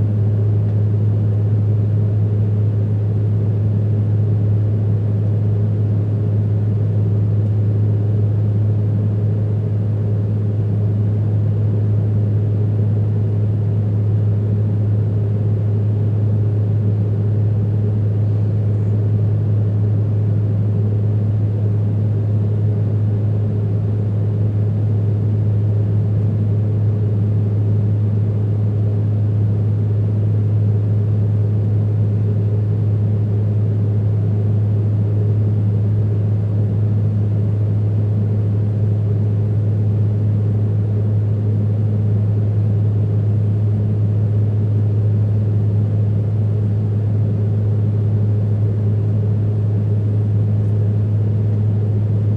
neoscenes: at 6000 meters, overflight
2 December 2011, 15:37